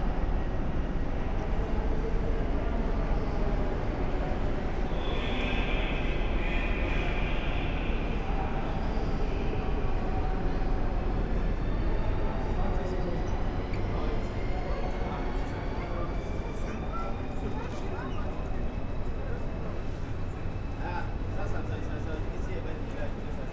{"title": "Hamburg Hauptbahnhof - central station walk", "date": "2019-01-26 19:30:00", "description": "Hamburg Hauptbahnhof, main station, walking from the upper level down to the platform\n(Sony PCM D50, Primo EM172)", "latitude": "53.55", "longitude": "10.01", "altitude": "14", "timezone": "Europe/Berlin"}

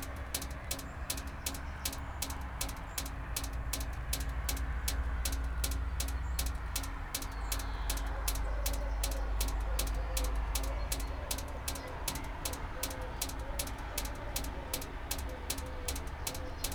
{"title": "St.Lubentius, Dietkirchen - drain, drops, cars, bells", "date": "2014-07-13 18:30:00", "description": "this remarkable and very old church, St.Lubentius, sits on a huge rock over the river Lahn. drops in a drain after a short rain, a few church bells, distant cars, unfortunately.\n(Sony PCM D50, DPA4060)", "latitude": "50.40", "longitude": "8.10", "altitude": "124", "timezone": "Europe/Berlin"}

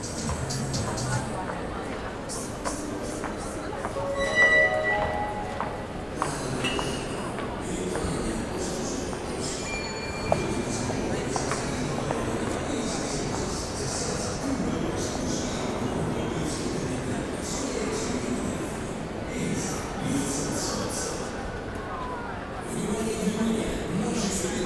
{"title": "Tallinn, Viru kesku shopping center", "date": "2011-04-17 10:40:00", "description": "walking in viru kesku shopping mall on a sunday morning", "latitude": "59.44", "longitude": "24.76", "altitude": "11", "timezone": "Europe/Tallinn"}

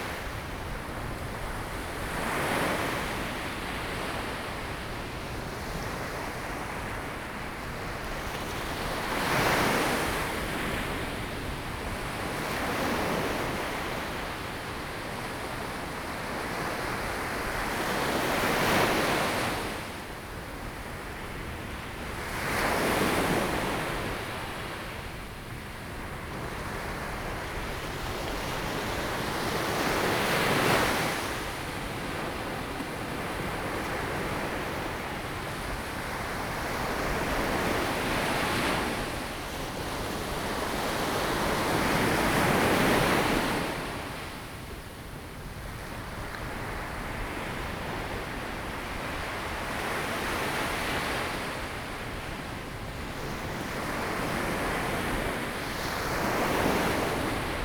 {"title": "六塊厝, Tamsui Dist., New Taipei City - at the seaside", "date": "2016-04-16 06:44:00", "description": "Sound of the waves\nZoom H2n MS+XY", "latitude": "25.24", "longitude": "121.45", "altitude": "3", "timezone": "Asia/Taipei"}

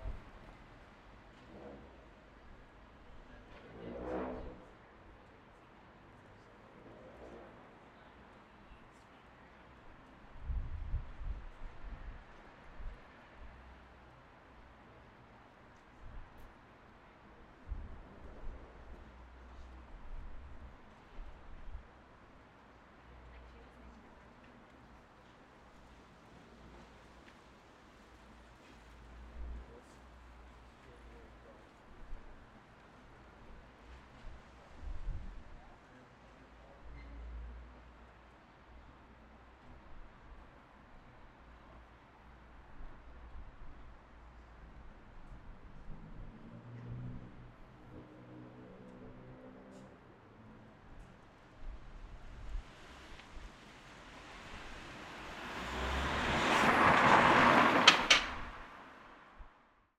{"title": "Church Ln, Belfast, UK - Church Lane", "date": "2020-10-21 19:51:00", "description": "Recording in front of two bars which are now closed (Bullitt and Bootleggers), quiet movement from a passerby, chatter, bicycles, and a little bit of wind. This is five days after the new Lockdown 2 in Belfast started.", "latitude": "54.60", "longitude": "-5.93", "altitude": "5", "timezone": "Europe/London"}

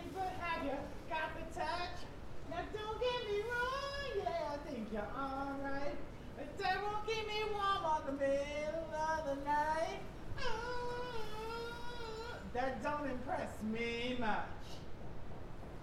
{"title": "Scarlette Manor Way, Tulse Hill, London, UK - Man Singing - Covid19 Lockdown", "date": "2020-03-27 14:50:00", "description": "Recorded during Covid19 lockdown in the UK, a man would sing outside his everyday this song, around a similar time. Recorded using sony PCMD100", "latitude": "51.45", "longitude": "-0.11", "altitude": "43", "timezone": "Europe/London"}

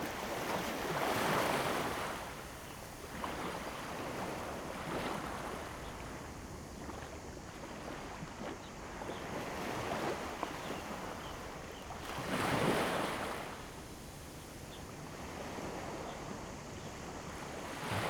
{"title": "鹽寮漁港, Shoufeng Township - Small fishing port", "date": "2014-08-28 17:55:00", "description": "Small fishing port, Very hot weather, Sound of the waves\nZoom H2n MS+ XY", "latitude": "23.83", "longitude": "121.59", "altitude": "7", "timezone": "Asia/Taipei"}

